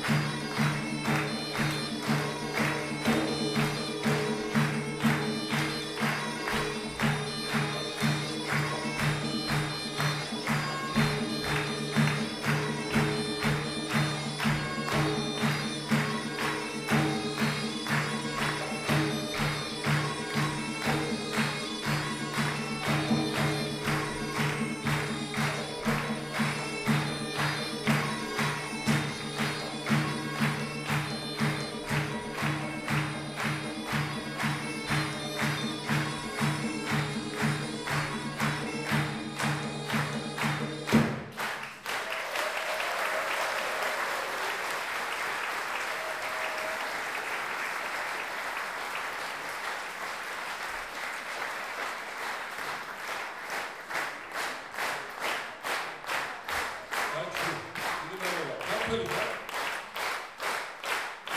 Bistrampolis, Lithuania, Chveneburebi
Gergian vocal ensemble Chveneburebi